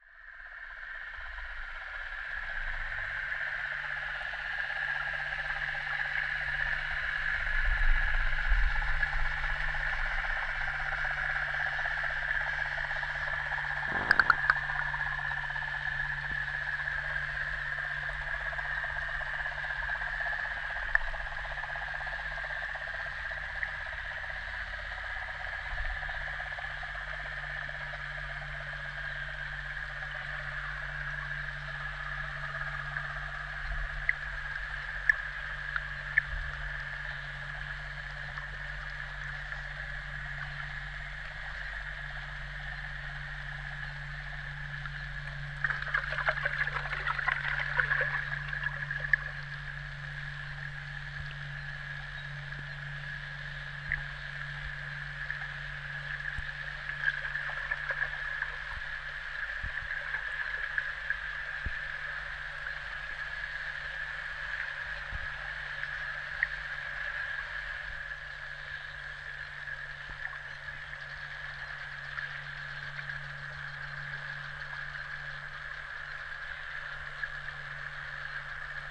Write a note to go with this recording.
hydrophone underwater recording. the tourist boat approaching...